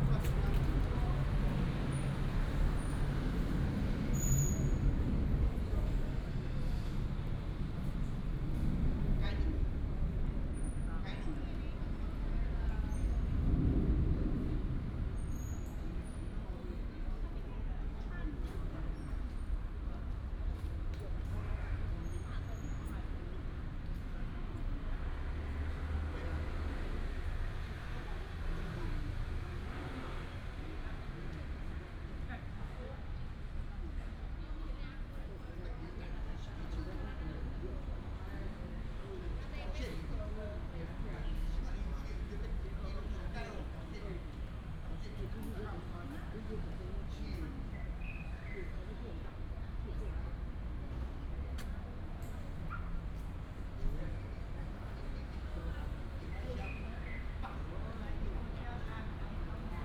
in the Park, Traffic sound, sound of birds
10 April, ~16:00